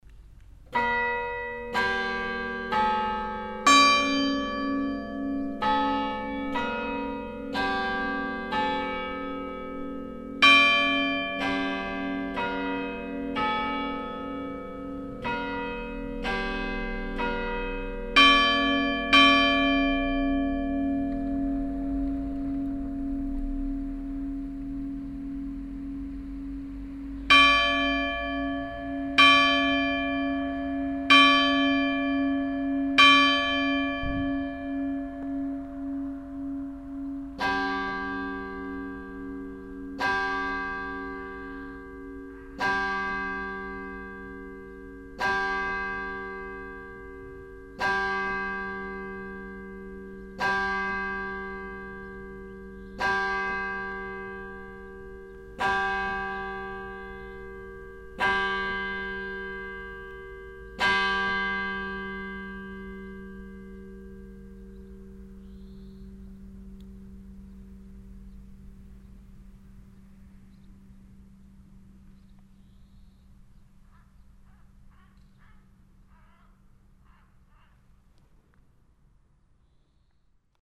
bourscheid, church, bells
At the church. The 10 o clock bells.
Bourscheid, Kirche, Glocken
Bei der Kirche. Die 10:00 Uhr-Glocken.
Bourscheid, église, cloches
À l’église. Le carillon de 10h00.
Project - Klangraum Our - topographic field recordings, sound objects and social ambiences
3 August 2011, 15:32